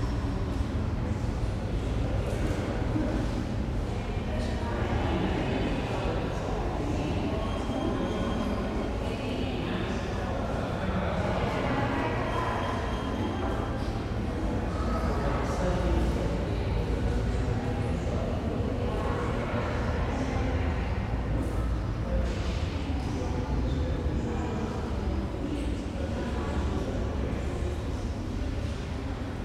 Archaeology Museum entry hall Athens, Greece - beeps in the reverberant hall